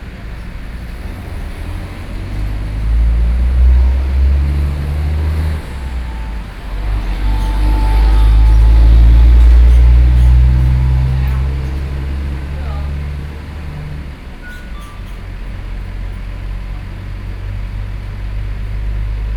Guangfu Rd., 大漢村 Hualien County - In front of the convenience store
In front of the convenience store, In the street, Traffic Sound, The weather is very hot
Binaural recordings
Hualien County, Taiwan, 27 August